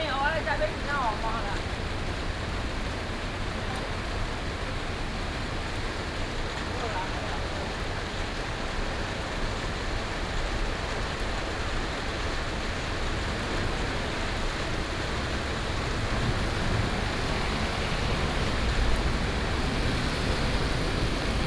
Ren 3rd Rd., Ren’ai Dist., Keelung City - walking in the Street
Thunderstorms, Traffic Sound, Various shops sound, rain
Ren’ai District, Keelung City, Taiwan, 18 July 2016, ~14:00